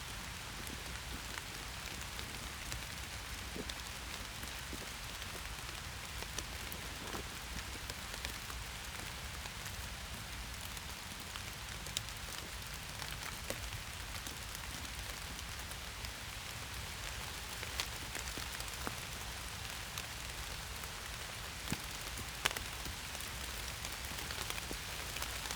Rain while sheltering under oak and birch trees, Akazienstraße, Mühlenbecker Land, Germany - Sheltering under oak and birch trees as the rain sets in
My cycle ride cut short by the weather; rain and wind on oak and birch leaves